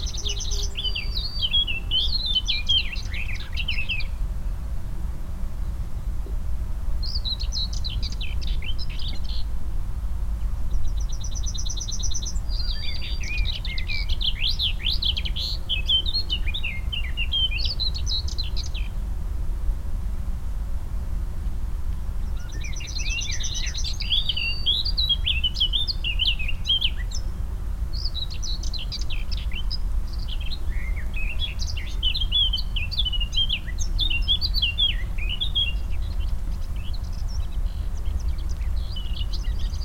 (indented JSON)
{
  "title": "Courcelles, Belgique - On the fields during summer",
  "date": "2018-06-03 13:30:00",
  "description": "Eurasian Blackcap singing into a thicket, Dunnock and Yellowhammer singing into the fields.",
  "latitude": "50.49",
  "longitude": "4.35",
  "altitude": "160",
  "timezone": "Europe/Brussels"
}